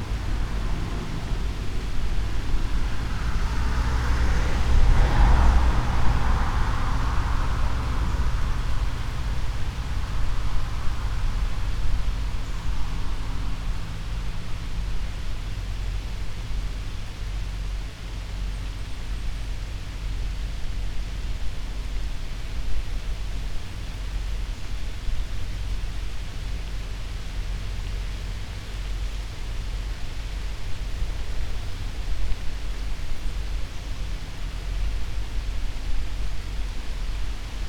Ankaran, Slovenia - border area
winds through poplar tree, cars and motorbikes traffic, no stopping, no brakes, no driving off, just abandoned houses with already visible decay ... everything seemingly fluid nowadays